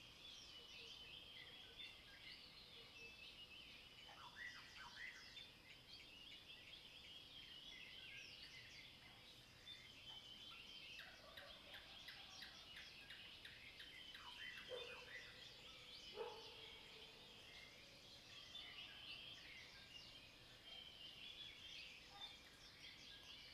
綠屋民宿, 桃米生態村 - Early morning
Crowing sounds, Bird calls, Frogs chirping, Early morning
Zoom H2n MS+XY